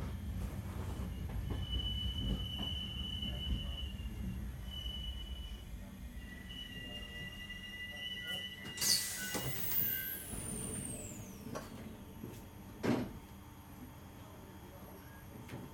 on the train through Lasko, Slovenia
sound from the train as we pull into the station